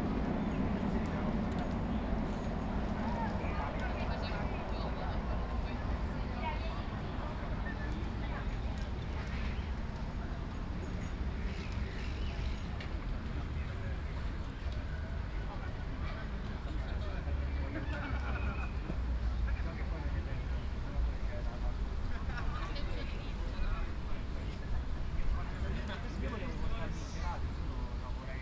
"Reading on Sunday at Valentino Park in the time of COVID19" soundscape
Chapter LXXXVI of Ascolto il tuo cuore, città. I listen to your heart, city
Sunday May 24th 2020. San Salvario district Turin, staying at Valentino park to read a book, seventy five days after (but day twenty-one of of Phase II and day ight of Phase IIB abd day two of Phase IIC) of emergency disposition due to the epidemic of COVID19.
Start at 1:18 p.m. end at 1:52 p.m. duration of recording 34’’53”
Coordinates: lat. 45.0571, lon. 7.6887